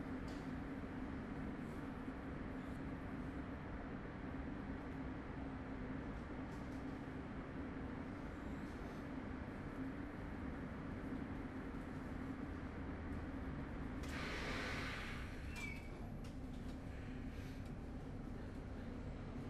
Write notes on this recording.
Riding with the tram. Every morning the same routine: the well known slight permutation of known faces, places, sounds: always the same and yet slightly different in arrangement and actual occurance (if that's a word). I walk the same way, take a variation of seats in the front of the tram, where every morning more or less the same faces sit: students, kids, office worker, craftsmen, tired, reading, copying homework. The sounds are familiar and yet always slightly different, unique in the moment.